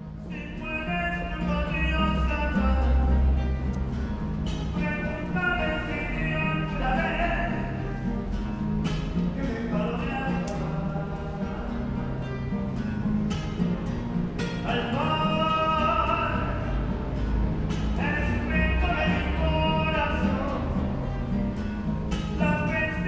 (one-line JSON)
{"title": "neoscenes: L-train station singer", "date": "2007-12-11 22:05:00", "latitude": "40.72", "longitude": "-73.96", "altitude": "6", "timezone": "US/Arizona"}